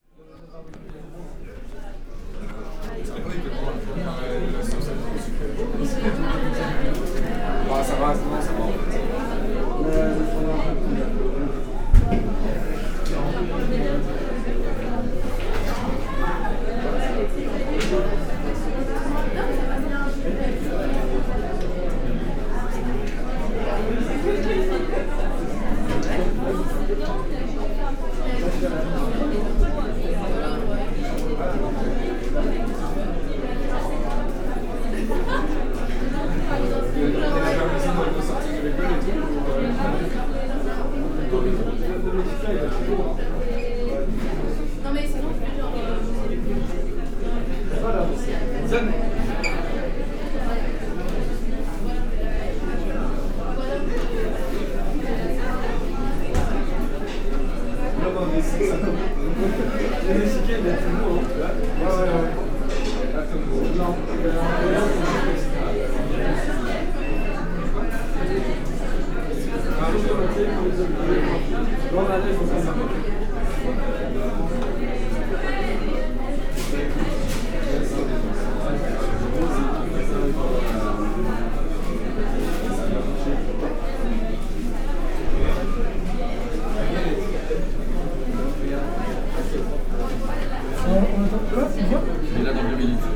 Long file of people waiting in a bar called Izobar, which is a fast food.